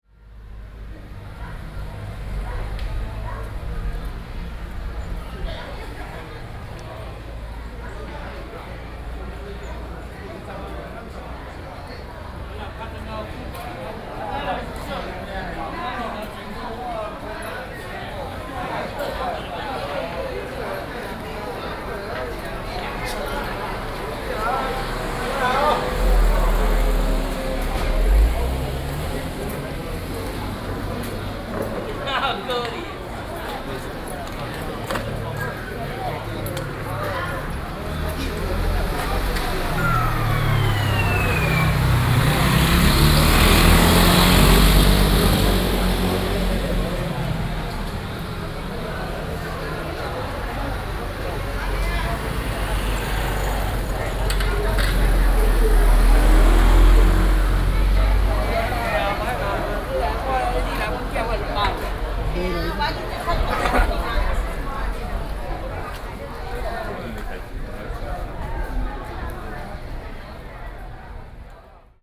Zhongzheng, Keelung - Intersection
A group of people gathered in front of the temple, Traditional temple festivals, Sony PCM D50 + Soundman OKM II
基隆市 (Keelung City), 中華民國, June 2012